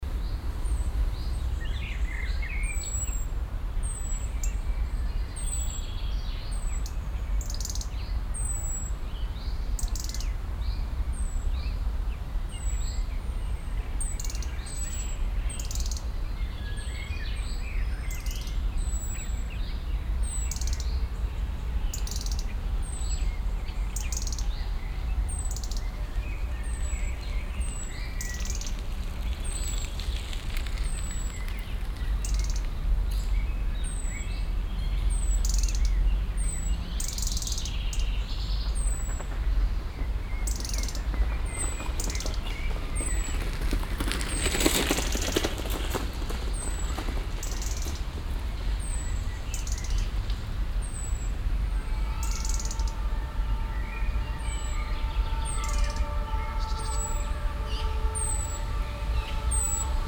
cologne, stadtgarten, gehweg nord, parkbank
auf gehweg an parkbank stehend -
stereofeldaufnahmen im juni 08 - nachmittags
project: klang raum garten/ sound in public spaces - in & outdoor nearfield recordings